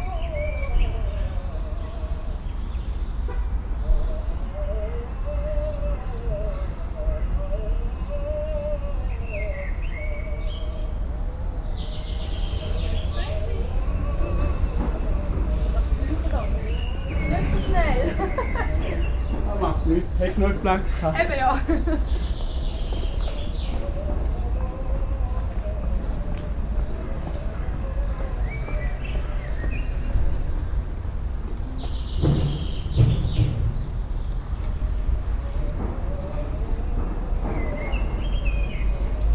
St. Gallen (CH), passing the theatre - St. Gallen (CH), outside the theatre
accidental duet of a singing bird and an opera singer doing his warm-up. pedestrians passing by. recorded june 7, 2008. - project: "hasenbrot - a private sound diary"